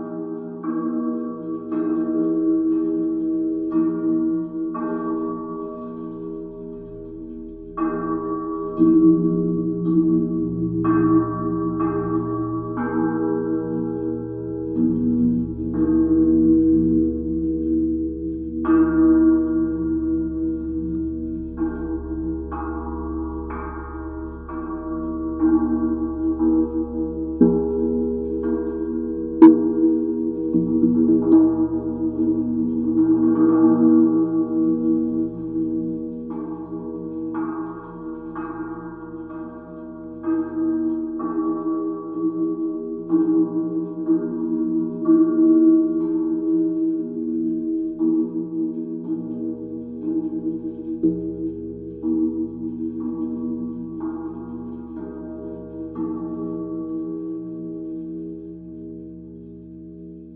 Maintenon, France - Barrier
Playing with a new metallic barrier surrounding the college school. I noticed these huge steel bars would be perfect to constitude a gigantic semantron. So I tried different parts. Recorded with a contact microphone sticked to the bars.